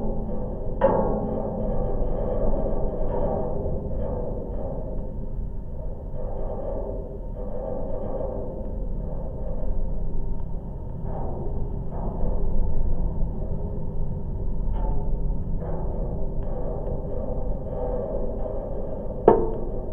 Daugavpils, Latvia, watchtower
high metallic watchtower near the railway lines. recorded with new LOM geophone.